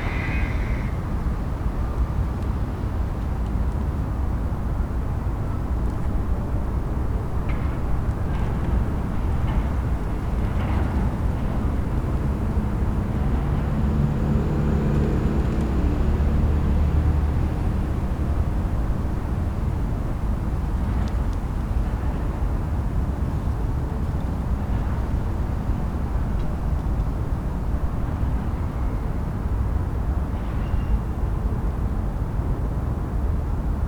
berlin: mergenthalerring - A100 - bauabschnitt 16 / federal motorway 100 - construction section 16: abandonned allotment
abandonned allotment (destroyed in february 2014)
sizzling noise of a reed screen fence, someone using an angle grinder, different birds, 2 local trains passing by and the distant drone of traffic
the motorway will pass the east side of this territory
the federal motorway 100 connects now the districts berlin mitte, charlottenburg-wilmersdorf, tempelhof-schöneberg and neukölln. the new section 16 shall link interchange neukölln with treptow and later with friedrichshain (section 17). the widening began in 2013 (originally planned for 2011) and shall be finished in 2017.
january 2014
Berlin, Germany, 9 January 2014